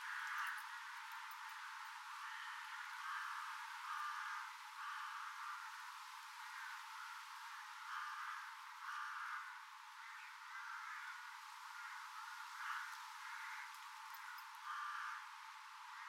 Randers NØ, Randers, Danmark - Crows nesting
Here the crows nest and there are hundreds, making a nice show
Randers NØ, Denmark